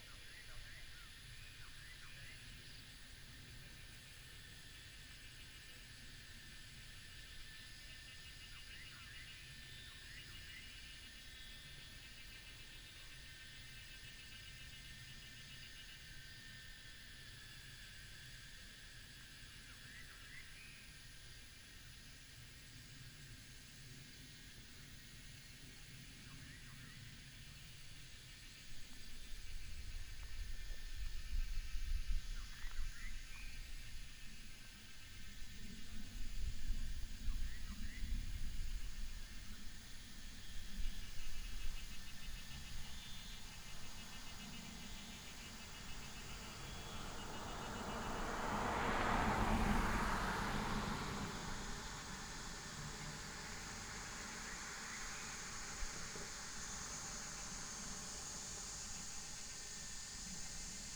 鳳儀橋, 大溪區承恩路 - little village
little village, Bird call, Cicada cry, Traffic sound